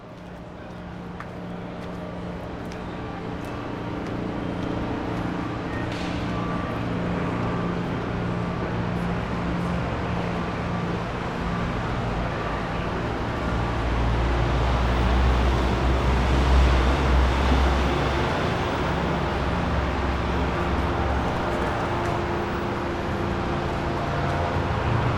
Helicopter vs. Frank Gehry, Seattle, WA, USA - Helicopter/EMP Museum
Recorded within the semi-cavernous entrance to the EMP Museum. Neat things happening as the sound of a passing helicopter filled the weird space.
Sony PCM-D50